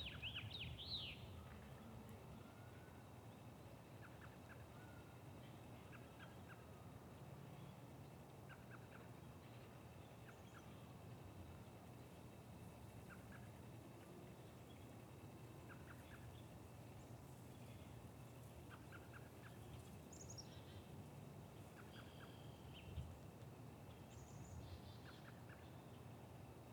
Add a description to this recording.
Sounds of the backyard on a spring day